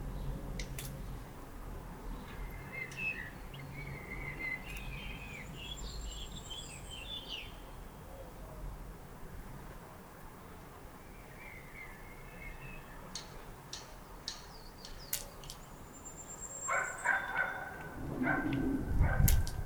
Lanuéjols, France - Rainy day
In this rainy and windy day, I just wait rain stops, but it never stops. A dog is devoured by boredom, and in this small village, nothing happens.
30 April 2016, 15:30